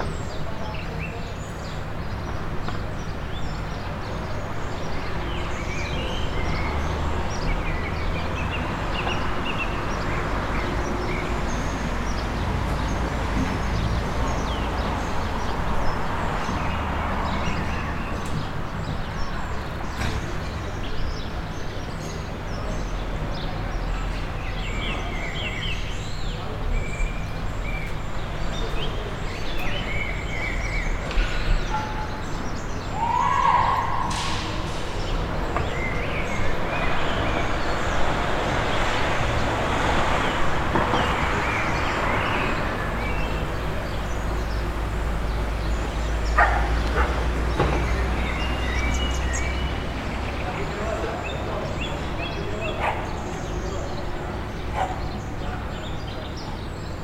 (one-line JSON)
{"title": "Ijentea Kalea, BAJO, Donostia, Gipuzkoa, Espagne - Outside the baker's", "date": "2022-05-27 14:20:00", "description": "Outside the baker's\nCaptation ZOOM H6", "latitude": "43.32", "longitude": "-1.99", "altitude": "16", "timezone": "Europe/Madrid"}